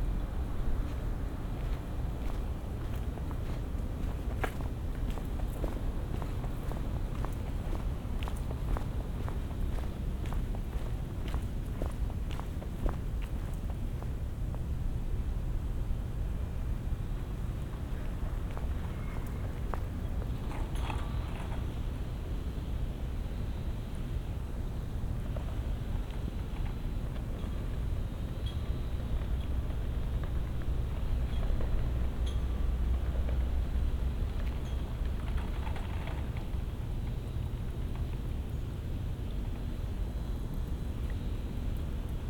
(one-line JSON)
{"title": "Montreal: 4500 Ch. Queen Mary - 4500 Ch. Queen Mary", "date": "2009-03-16 02:45:00", "description": "equipment used: Olympus LS-10 w/ Soundman OKM II Binaural Mic\nLate night recording on Queen Mary across from the Hôpital des Anciens Combattant...lots of wind and small sounds contrasted by the seldom sounds of motor vehicles.", "latitude": "45.49", "longitude": "-73.62", "altitude": "100", "timezone": "America/Montreal"}